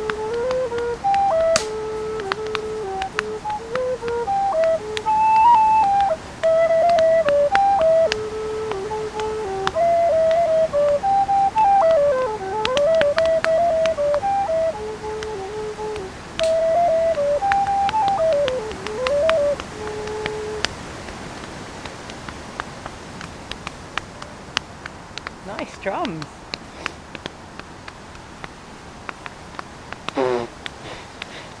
{
  "title": "Near the Octagon, Glen of the Downs, Co. Wicklow, Ireland - Fireside Music",
  "date": "2017-07-29 09:58:00",
  "description": "This is a recording made with the trusty EDIROL R09 sitting at the fireside in the morning at the camp by the Octagon, playing music with Jeff's recorders, accompanied by two budding musicians, Hawkeye and Bea. Bea is on percussion, Hawkeye is on recorder. The wind sings with us and you can hear other comrades from the camp speaking as we sit in the smoke, listening and sounding together.",
  "latitude": "53.14",
  "longitude": "-6.12",
  "altitude": "205",
  "timezone": "Europe/Dublin"
}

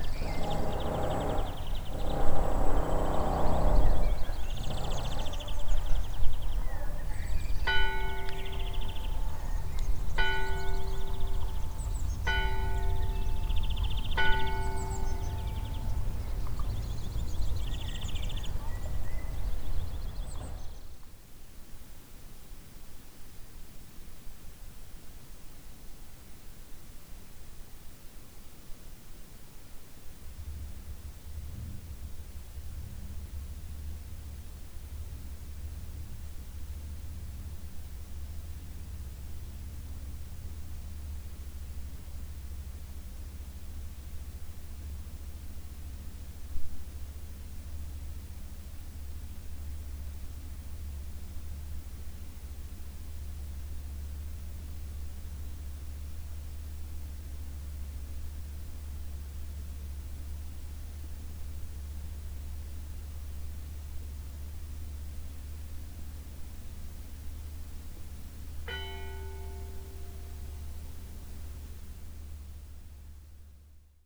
{
  "date": "2010-08-30 12:00:00",
  "description": "Pssst! (Hirschroda bei Tag und Nacht)",
  "latitude": "51.21",
  "longitude": "11.69",
  "altitude": "201",
  "timezone": "Europe/Berlin"
}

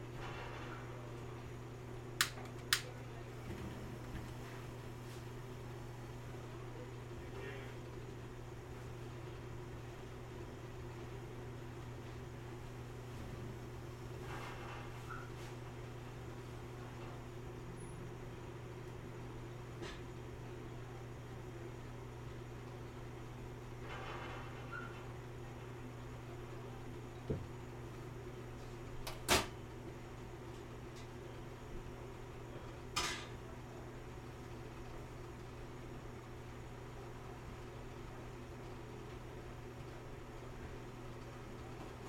{
  "title": "E 45th St, New York, NY, USA - From a Japanese Kitchen in Midtown",
  "date": "2022-01-19 14:34:00",
  "description": "Ambient sounds from a Japanese restaurant kitchen in Midtown.",
  "latitude": "40.75",
  "longitude": "-73.97",
  "altitude": "14",
  "timezone": "America/New_York"
}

